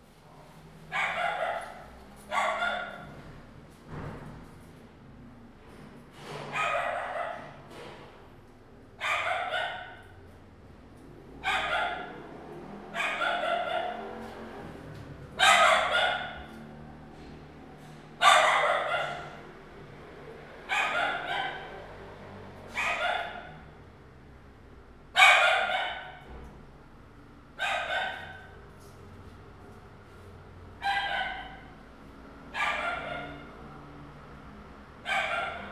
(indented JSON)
{
  "title": "Daren St., Tamsui Dist. - Dog barking",
  "date": "2016-12-23 11:34:00",
  "description": "Inside the apartment, staircase, Dog barking, Traffic sound\nZoom H2n MS+XY",
  "latitude": "25.18",
  "longitude": "121.44",
  "altitude": "45",
  "timezone": "GMT+1"
}